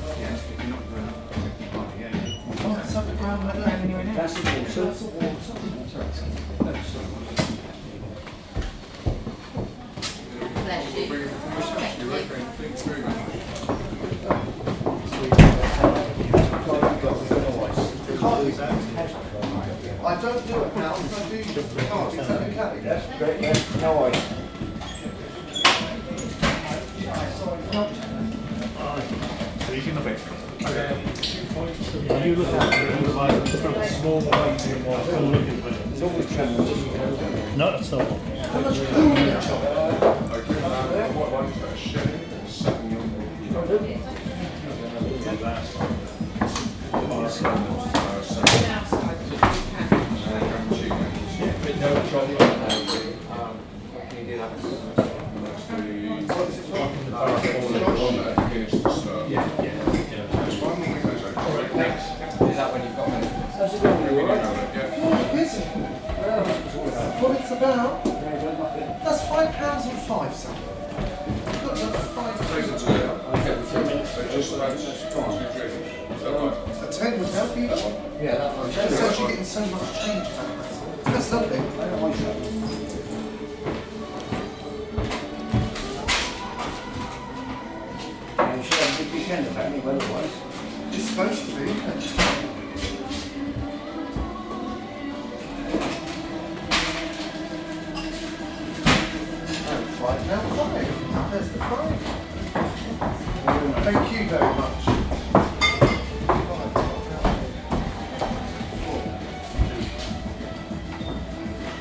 Ventnor restaurant bar during local arts festival, customers chatting, ordering drinks and food. Waiters carrying food to dining tables.
5 May 2014, 13:00